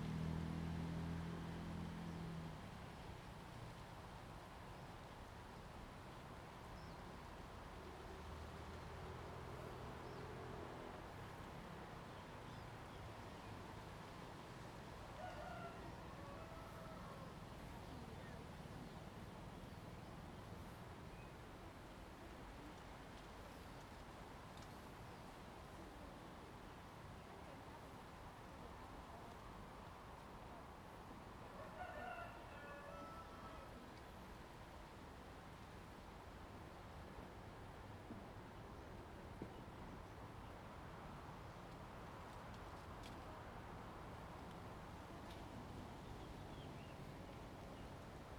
Abandoned military base, Forest and Wind, next to the parking, Chicken sounds
Zoom H2n MS+XY